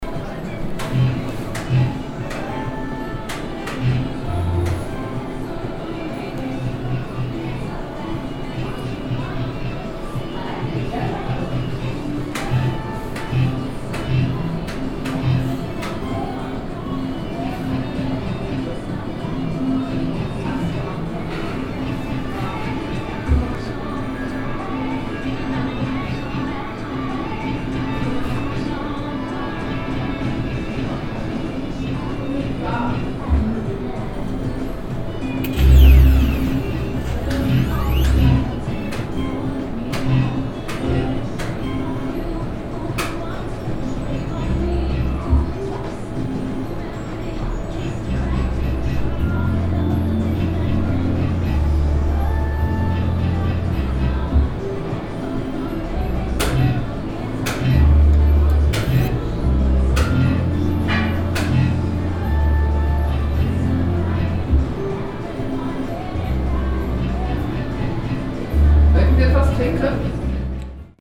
essen, viehofer street, game hall
In einer kleinen Spielhalle. Der Klang der Spielautomaten plus der Musik Ambience. Am Ende eine Frage von der Bedienung.
inside a small game hall. The sound of the gambling machines plus the music ambience finished by a question of a waitress.
Projekt - Stadtklang//: Hörorte - topographic field recordings and social ambiences